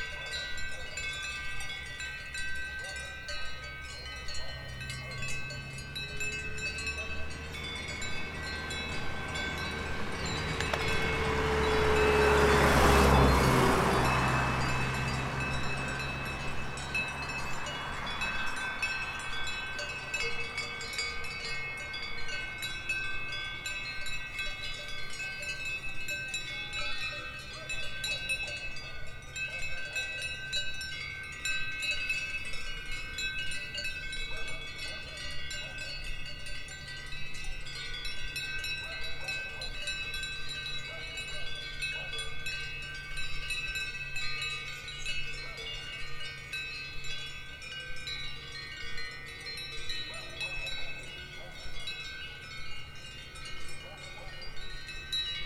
scierie Joly Lescheraines, Savoie France - Sonnailles des Bauges